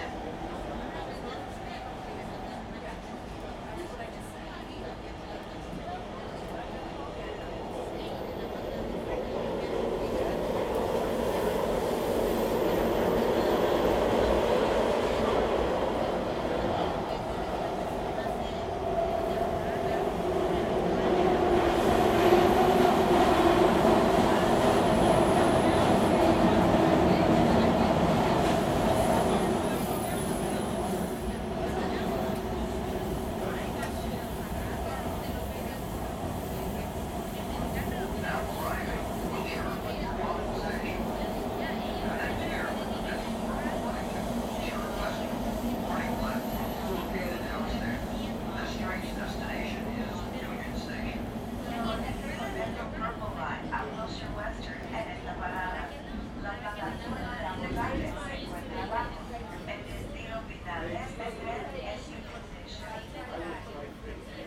LA - underground train ride, red line to union station, passengers talking, announcements, doors opening and closing;
CA, USA, 24 January 2014